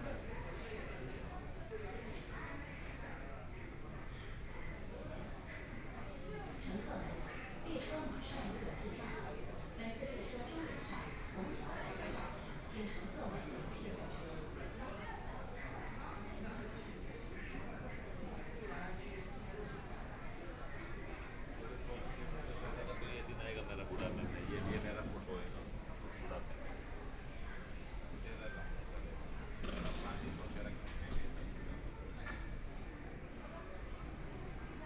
{"title": "Shanghai, China - In the subway", "date": "2013-11-21 16:12:00", "description": "walking in the Yuyuan Garden station, from Yuyuan Garden Station to East Nanjing Road Station, Binaural recording, Zoom H6+ Soundman OKM II", "latitude": "31.24", "longitude": "121.48", "altitude": "6", "timezone": "Asia/Shanghai"}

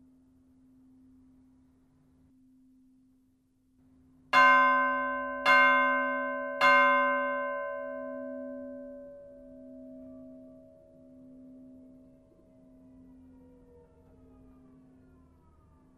{"title": "Chaumont-Gistoux, Belgique - Bonlez, the bells", "date": "2015-10-31 09:30:00", "description": "The Bonlez bell manually ringed in the tower. It's a very poor system and dirty place. This is not ringed frequently, unfortunately.\nThis is the smallest bell, an old one. In first, as I begin a religious act, I ring an angelus.", "latitude": "50.70", "longitude": "4.69", "altitude": "74", "timezone": "Europe/Brussels"}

